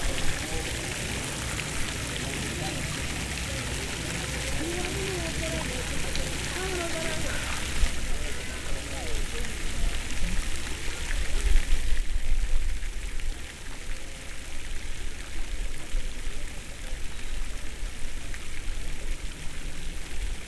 Ibagué, Tolima, Colombia - Fuiente
Fuente centro de Ibaguè- Fountain downtown ibaguè